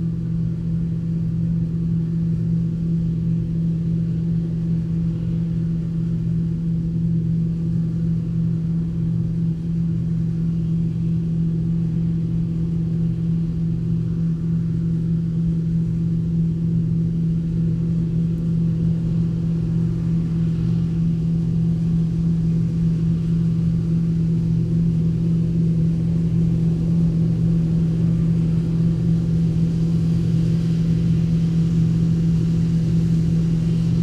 Green Ln, Malton, UK - Combine harvesting ...
Combine harvesting ... movement from tractors and trailers ... open lavalier mics clipped to sandwich box ...